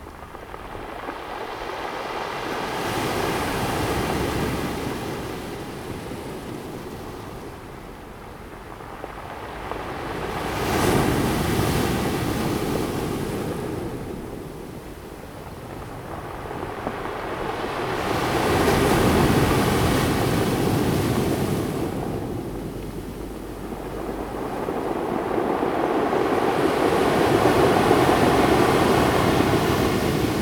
北濱公園, Hualien City - sound of the waves
sound of the waves
Zoom H2n MS+XY +Sptial Audio
Hualian City, 花蓮北濱外環道, 14 December 2016, 16:16